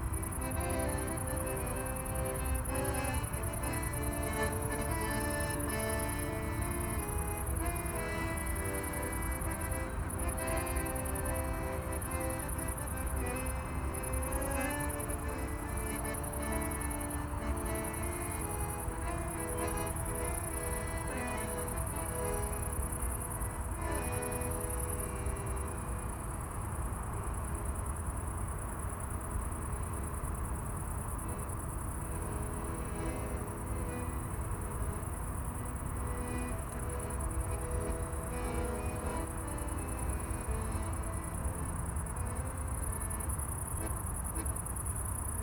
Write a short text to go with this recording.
far away from the other activities on the field, a woman is practising on the accordion. further crickets and noise of the nearby autobahn. (SD702 DPA4060)